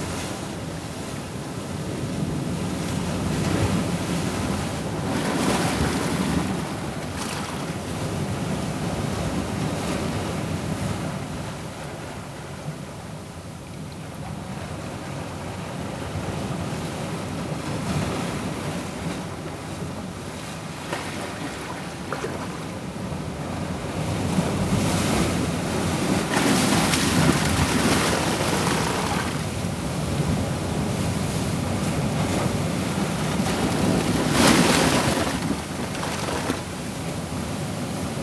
heavy duty waves splashing among rocks, squeezing into a narrow passage.
Porto, Portugal, 30 September 2013, 14:51